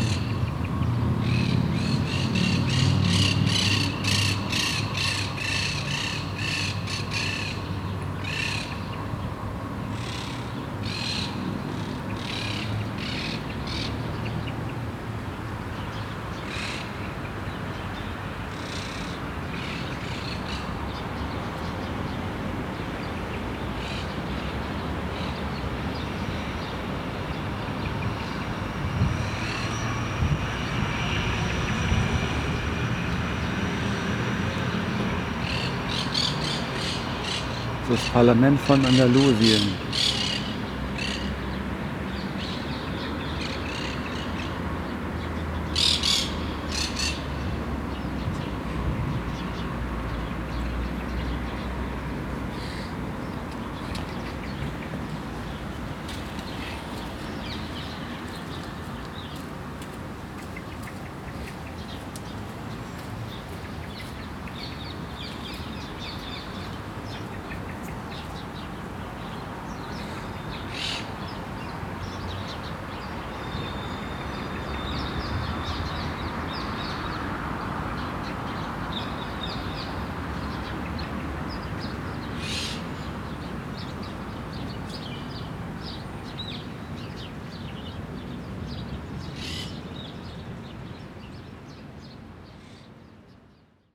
Sevilla, Provinz Sevilla, Spanien - Sevilla, parlament city park, birds
In the city park at the parlament building. The sound of birds in the high palm trees and cars and motorcycles from the nearby street on a warm autum afternoon.
international city sounds - topographic field recordings and social ambiences